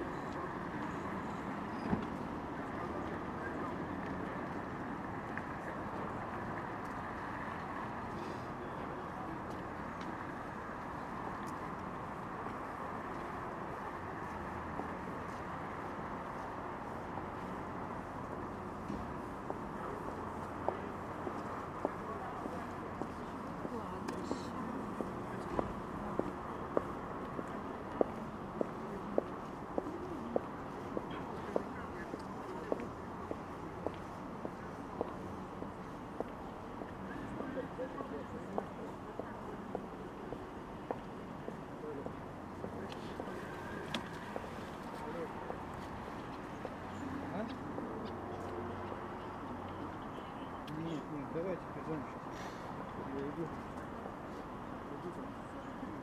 ул. Донская, Москва, Россия - Donskoy Monastery
The territory of the Donskoy Monastery. I sat on a bench and listened to what was happening around me. Frosty winter day, January 27, 2020. Recorded on a voice recorder.